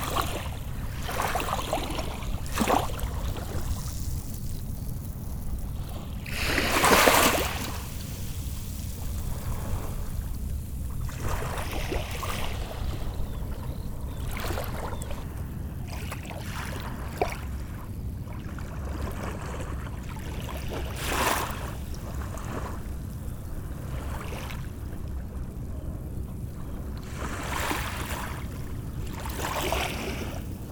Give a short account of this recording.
Recording of the sea at the very end of the Pointe d'Arçay, a sandy jetty. At the backyard, the fishing vessels drone.